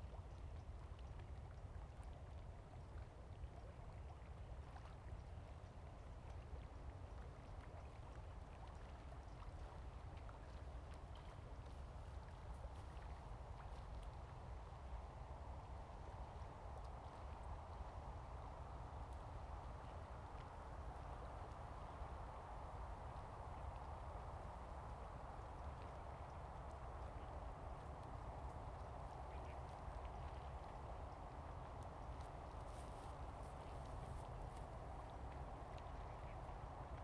西湖水庫, Lieyu Township - Next to the reservoir
Iron-wood, Next to the reservoir, Wind
Zoom H2n MS +XY